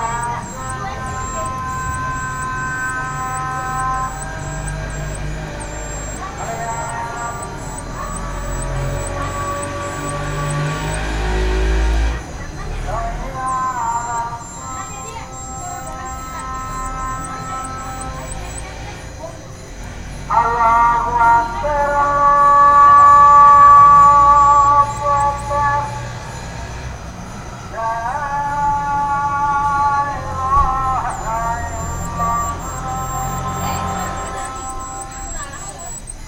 Saundatti, Near Khadi Kendra, Muezzin / bells / insect
India, Karnataka, Saundatti, Muezzin, bells, insect
Saundatti, Karnataka, India, 19 February 2011